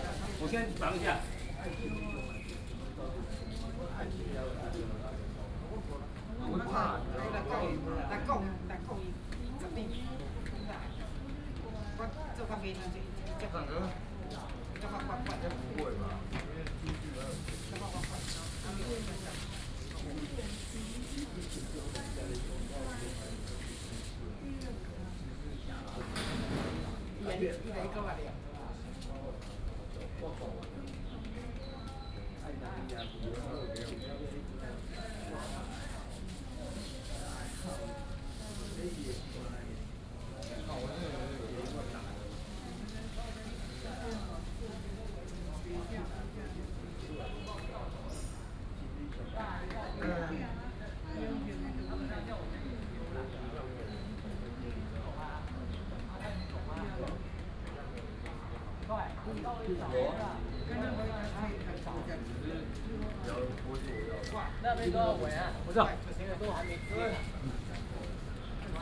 四維公園, Da'an District - in the Park
in the Park, Construction noise, Elderly chatting, Student